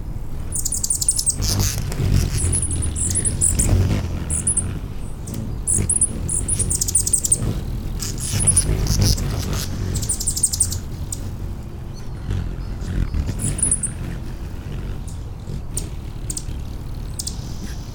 I've spent the past couple of months recording hummingbirds. I've got about 7 hours worth now. This is a really active 4 minute clip I just got this morning.
It's a trinaural recording from an array I designed myself.